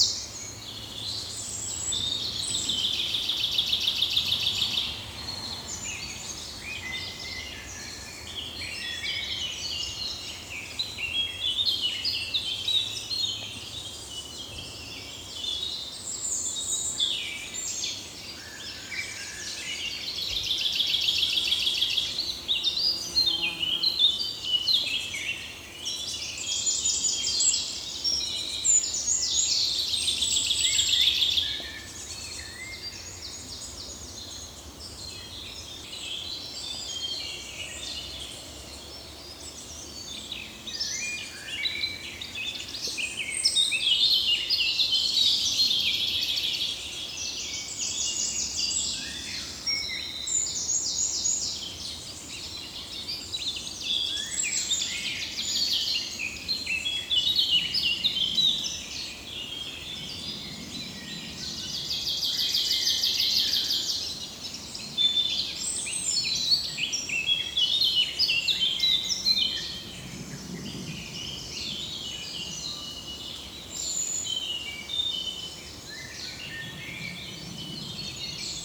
Thuin, Belgique - Birds in the forest
Common Chaffinch solo, European Robin, Eurasian Blackcap, a solitary Sparrow.
Thuin, Belgium, June 2018